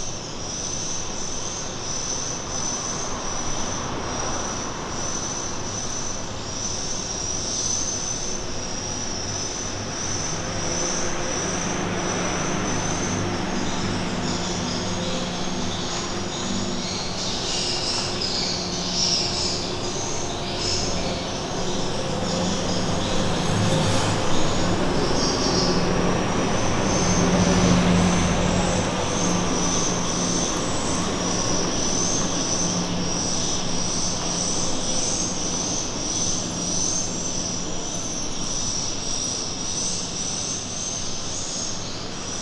Bandar Kota Bharu, Kelantan, Malaysia - Edible Bird Nest farming in Kota Bharu, Kelantan, Malaysia
Midnight stereo recording outside a building used for Edible Bird Nest farming, continuously playing a short loop of nesting Swifts to attract birds.
28 August